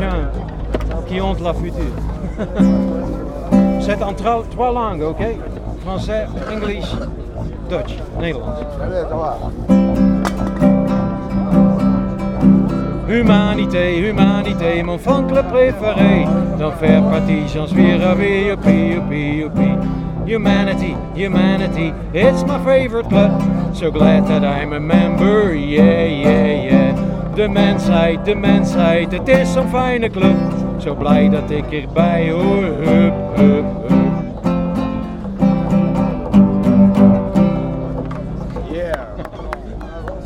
Brussels, Place de Moscou, Real Democracy Now Camp, a singer.